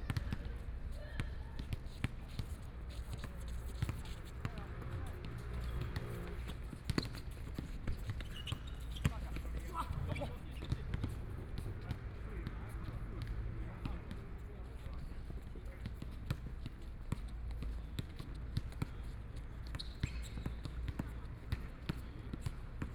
Night basketball court, Play basketball, Traffic Sound, Zoom H4n+ Soundman OKM II
5 January 2014, 19:35